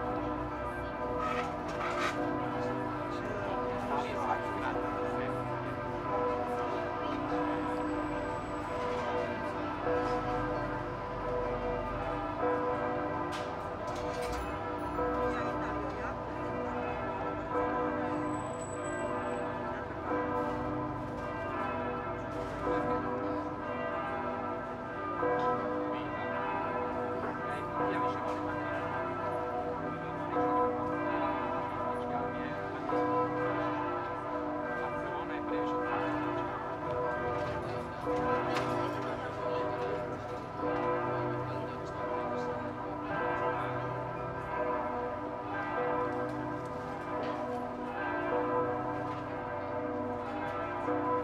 Mitte, Berlin, Germany - Museum Insel